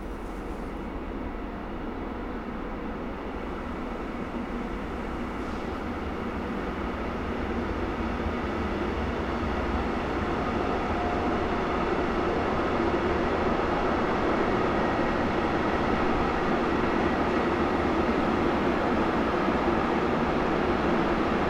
Bismarckstr., Cologne, Germany - railroad embankment, passing trains
the whole area is dominated by the sound of trains, freight trains and their echoes can be heard all night, in the streets and backyards.
(Sony PCM D50)